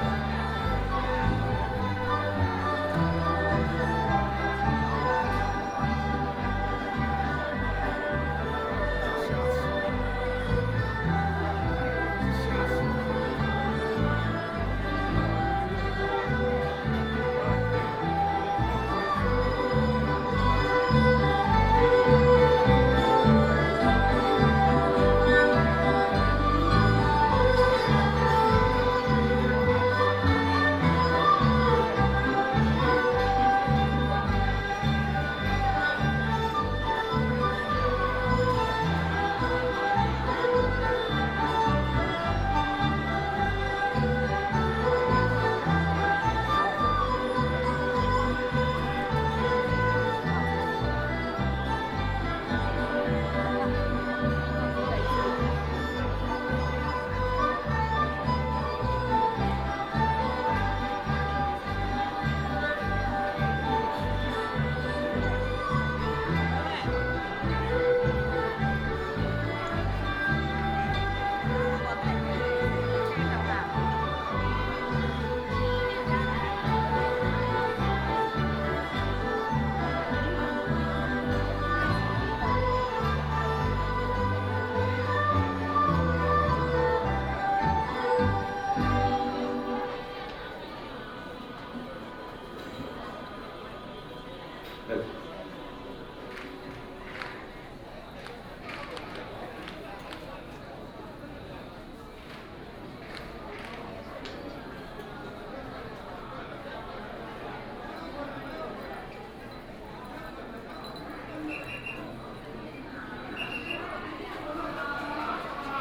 Temple ceremony, The president of Taiwan participated in the temple ceremony
Taichung City, Taiwan